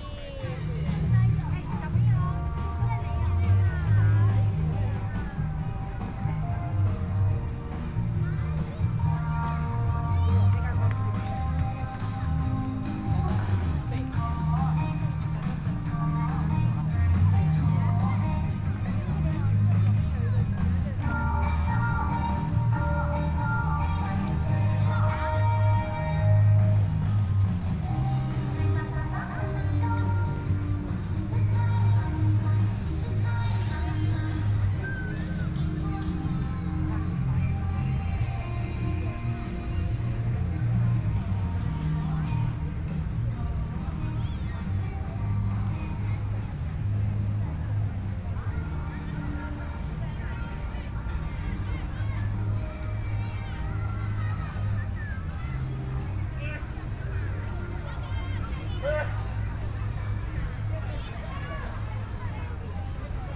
Yimin Festivtal, Fair, Binaural recordings, Sony PCM D50 + Soundman OKM II